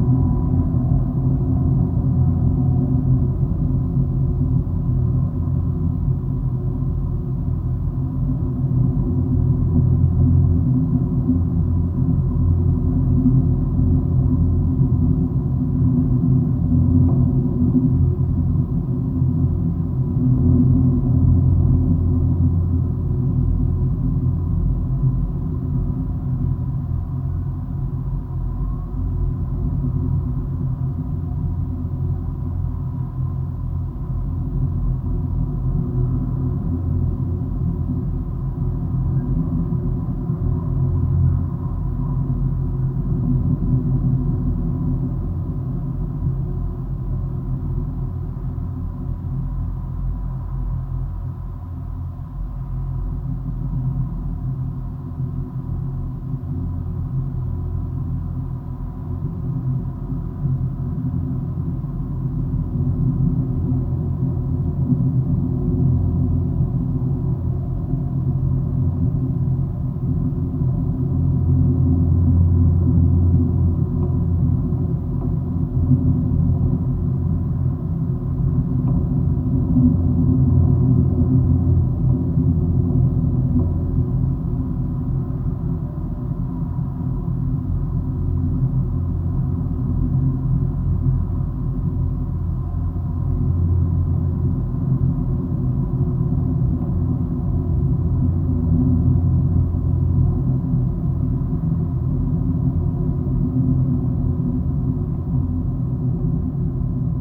Vilnius, Lithuania, lifts base
Liepkalnis' winters skiing base. Geophone on lift's base
Vilniaus apskritis, Lietuva, 17 October 2020, ~14:00